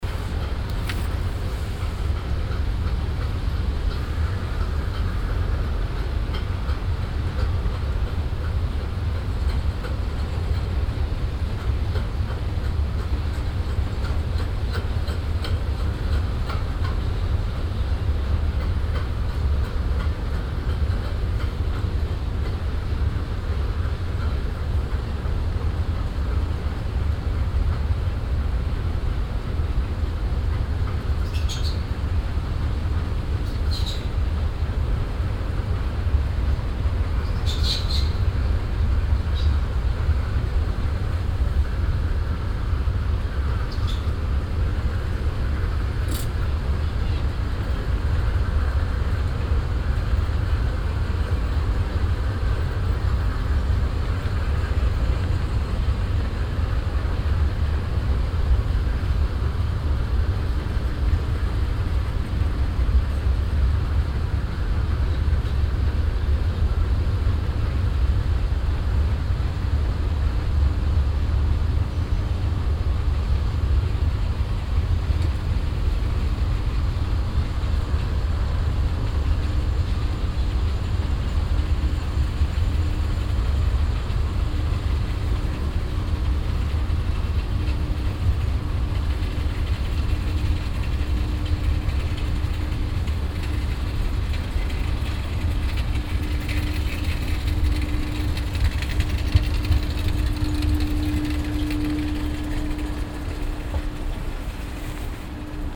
mechanisches laufband für fussgänger auf stadtbrücke, morgens
soundmap nrw
- social ambiences, topographic field recordings